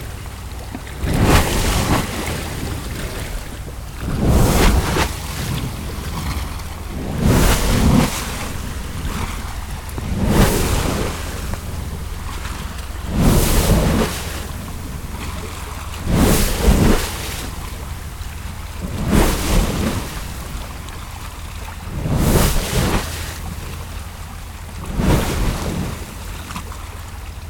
North Sydney Wharf - Violent water lapping at the wharf
Recorded with a pair of DPA 4060s with Earthling Design custom preamps into an H6 handy recorder
High St, North Sydney NSW, Australia, 22 December 2015